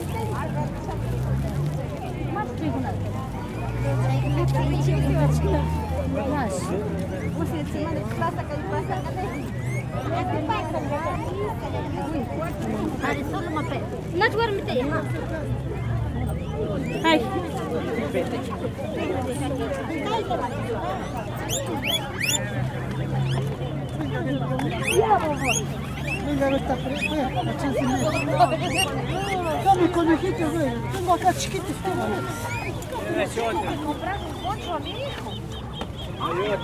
Guamote, Équateur - Animal market
A big market takes place every Thursday in this small Indian town. Next to the cemetery instead selling animals: cows, pigs of the Indies, geese, chickens ...
18 December 2014, ~12:00